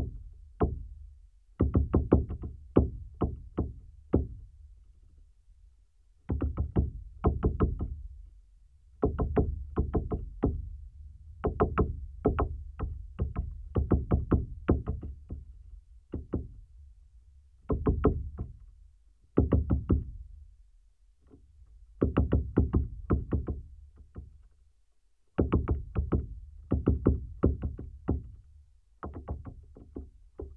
cold sunny day. about -15 degrees of Celcius. a pair of contact mics on dead pine tree. the woodpecker fly on, works, fly out.
6 February 2021, 16:00